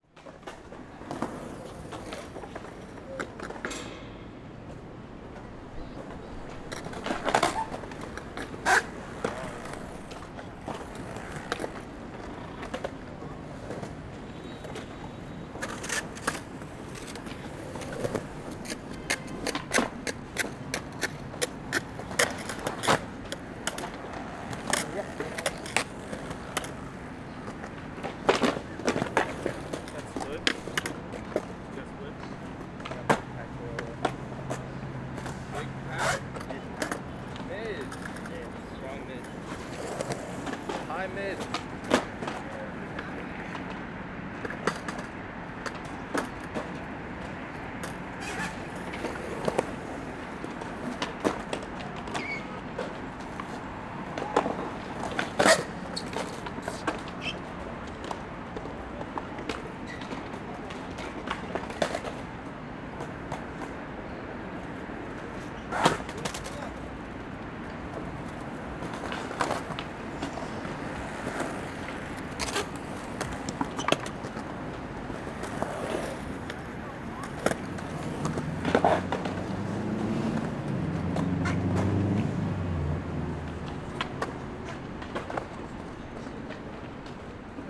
N Moore St, New York, NY, USA - Skating Sounds, Pier 25 Hudson Park
Skating Sounds, Pier 25 Hudson Park.
Zoom h6